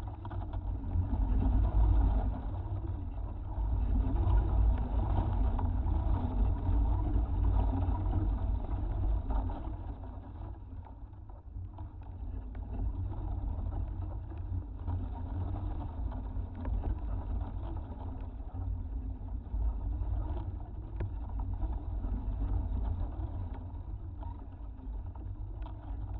Puerto de Sardina, Gran Canaria, palm contact
contact microphone placed om a trunk of palm
Puerto de Sardina, Las Palmas, Spain